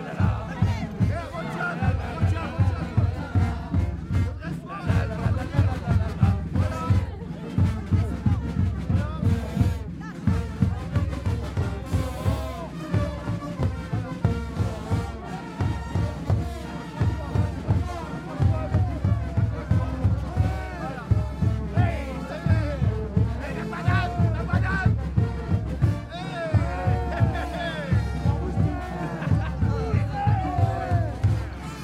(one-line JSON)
{"title": "All. du 8 Mai, Dunkerque, France - Mardyck - Carnaval de Dunkerque", "date": "2020-02-15 14:30:00", "description": "Dans le cadre du Carnaval de Dunkerque - Bourg de Mardyck (Département du Nord)\nBande (défilée) de Mardyck", "latitude": "51.02", "longitude": "2.25", "altitude": "4", "timezone": "Europe/Paris"}